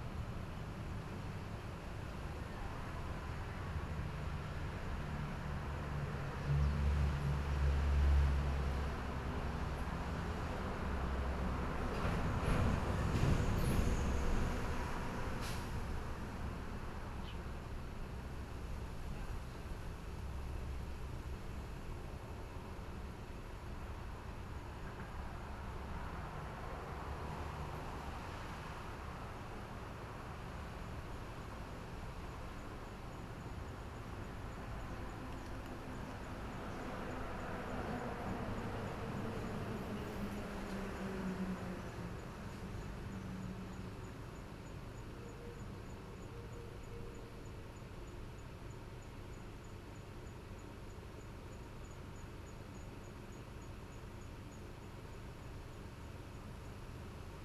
Beside the railway track, Hot weather, Train traveling through, Traffic Sound
Zoom H6 MS+ Rode NT4